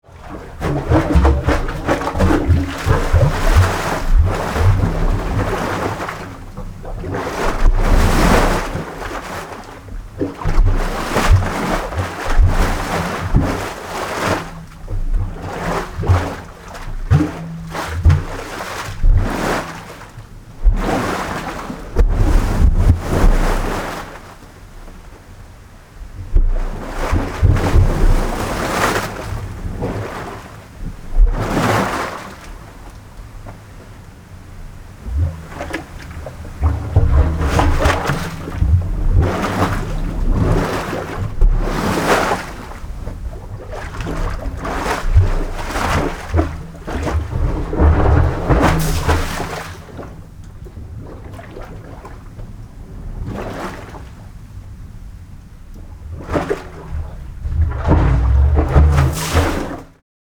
The Sea in a Pipe - Aldeburgh, Suffolk, UK
Between Aldeburgh and Thorpness at this exact spot is an inspection cover through which can be heard the sea. It only occurs at certain states of the tide and weather. I have only heard it once when I made this recording. Recorded with a Mix Pre 3 and a Rode NTG3 shotgun mic.